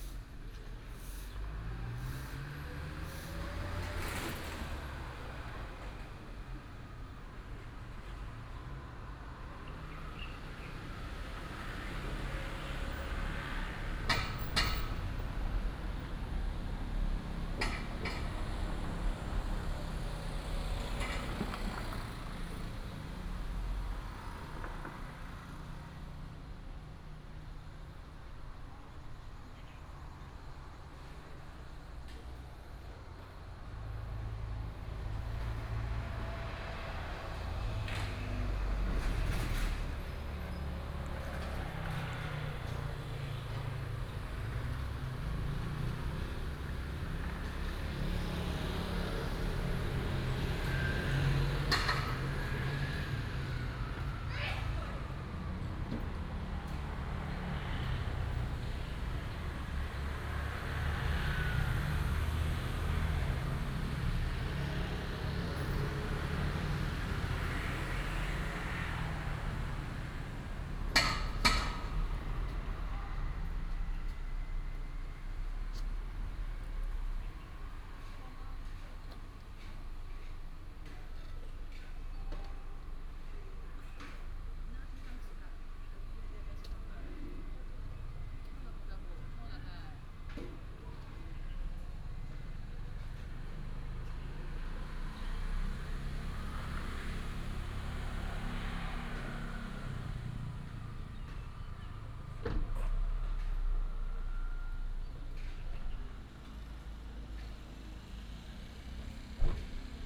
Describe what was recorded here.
In the square of the temple, Bird call, Garbage truck arrived, traffic sound, Primary school information broadcast, Binaural recordings, Sony PCM D100+ Soundman OKM II